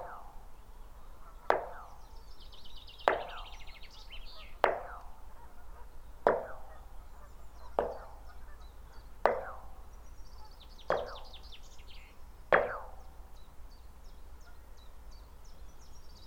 Yorkshire Sculpture Park - Serge Spitzer Sculpture
A well hidden Serge Spitzer sculpture, made of iron, lightly hit with my knuckle